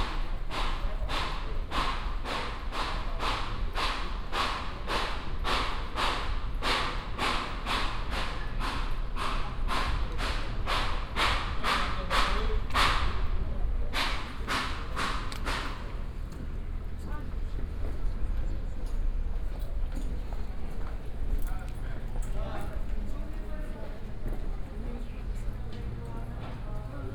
Ribeira Brava, back street in downtown - around the block
(binaural) walking around the backstreets in downtown of Riberia Brava. Sounds comming from different shops, cafes, businesses, radios and workshops.